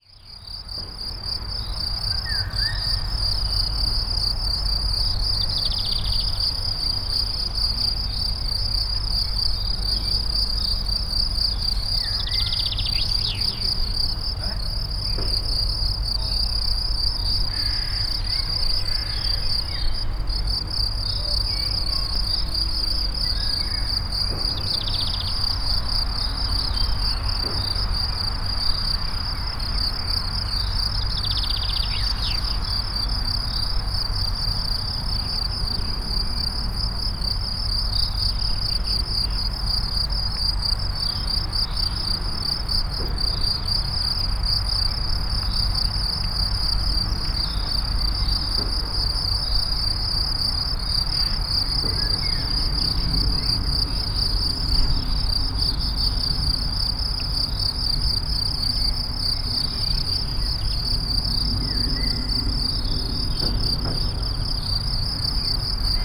A39 highway, Aire du Jura face au Pavillon.
France, 1 May